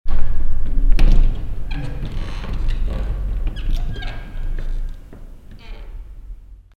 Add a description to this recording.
At the train station. A nice queeky wooden swing door to the entrance of the station building. Clervaux, Bahnhof, Tür, Am Bahnhof. Eine schön quietschende Schwingtür aus Holz am Eingang des Bahnhofgebäudes. Clervaux, gare ferroviaire, porte, À la gare ferroviaire. Le grincement agréable de la porte en bois à l’entrée du bâtiment de la gare. Project - Klangraum Our - topographic field recordings, sound objects and social ambiences